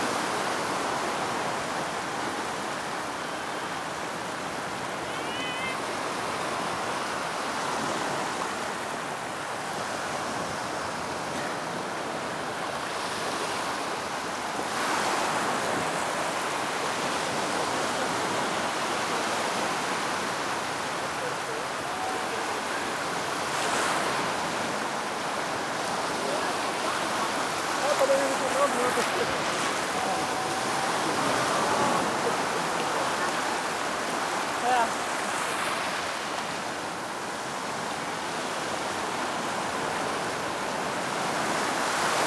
Scheveningen - zout water op Scheveningen